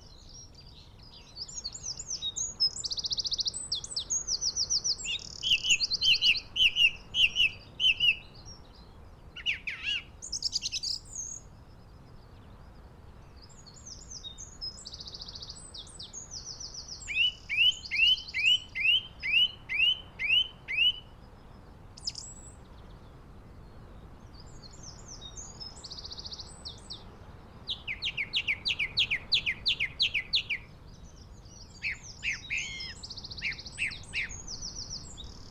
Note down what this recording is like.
song thrush song ... pre-amped mini jack mics in a SASS to Olympus LS 11 ... bird calls ... song ... from ... yellowhammer ... chaffinch ... crow ... skylark ... linnet ... dunnock ... wren ... rain and wind ...